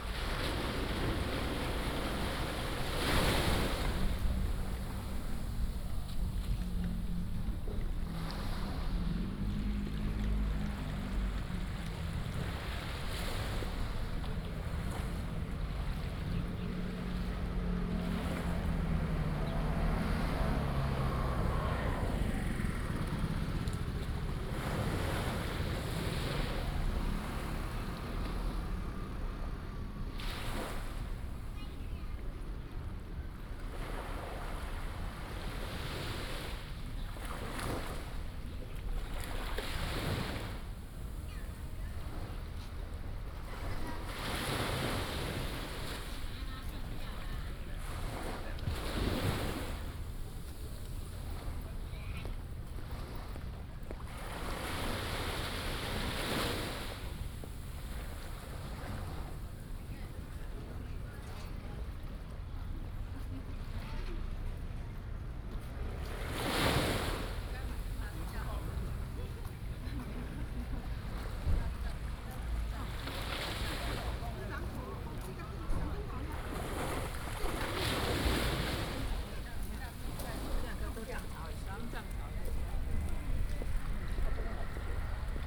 榕堤, Tamsui Dist., New Taipei City - Next to the coast
Next to the coast, Tide, Tourist
14 March 2016, 15:55